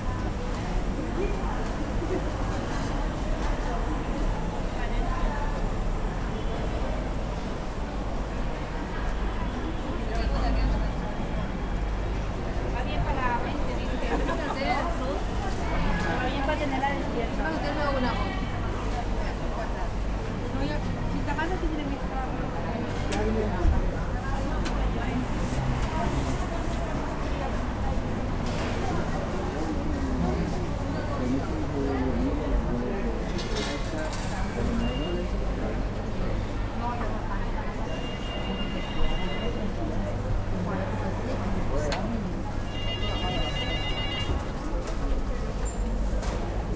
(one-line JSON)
{"title": "Mercat de Galvany", "date": "2011-01-13 13:27:00", "description": "Sound enviroment of a food market", "latitude": "41.40", "longitude": "2.14", "timezone": "Europe/Madrid"}